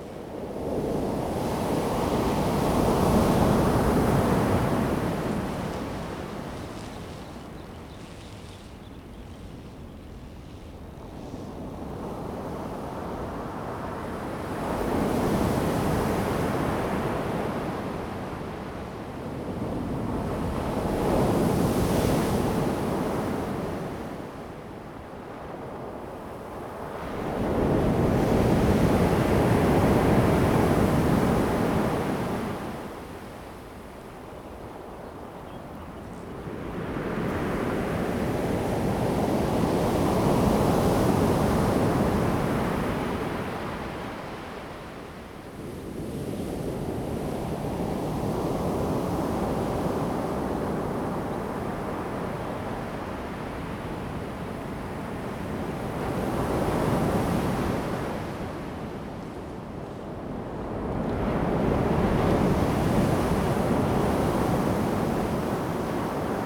泰和, 太麻里鄉台東縣 - Sound of the waves
At the beach, Sound of the waves
Zoom H2n MS+XY